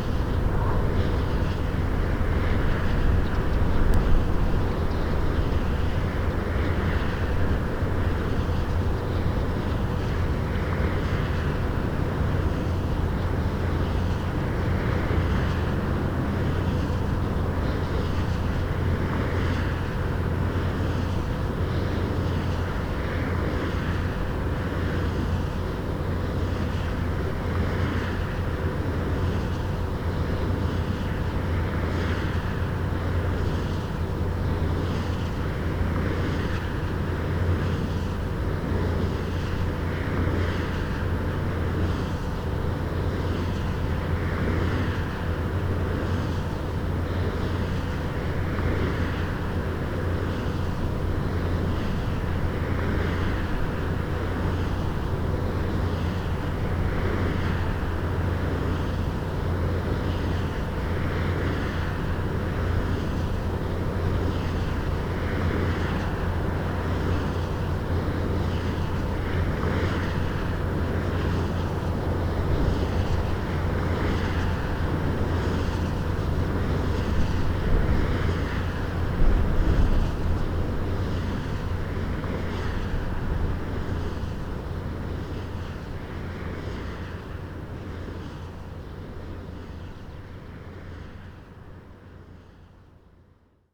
remscheid, dörpmühle: windrad - the city, the country & me: wind turbine
the city, the country & me: may 8, 2011